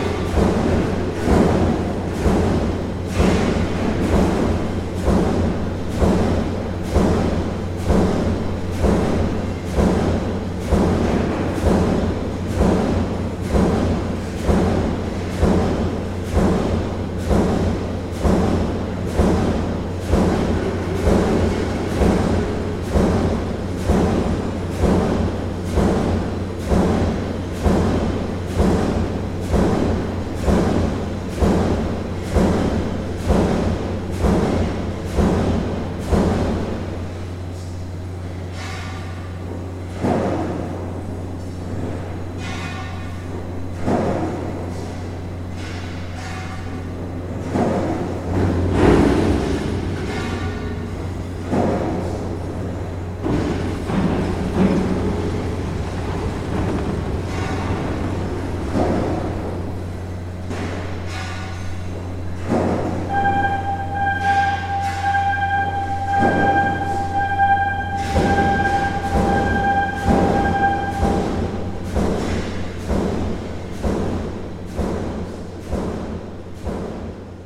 Steel press in action - recorded from outside the factory through an open window.
福岡県, 日本, 1 May, 21:18